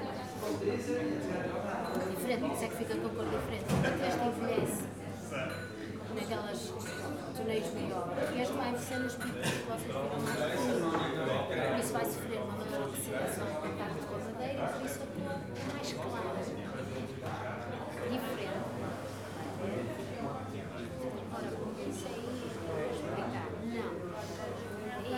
visitors sitting at tables, tasting porto wine samples. talking to waiters who explain the details about each bottle. tourists of many different countries. sort of high-class atmosphere.
1 October 2013, 17:42